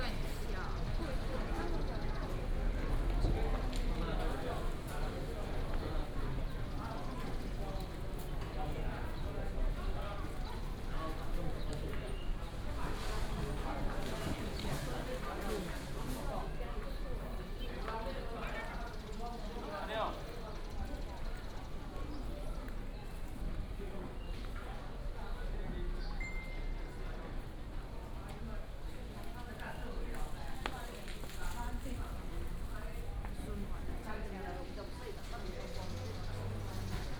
Dalongdong Baoan Temple, Taipei City - Walking in the temple
Walking in the temple, Traffic sound, sound of birds
Taipei City, Taiwan, 9 April, ~17:00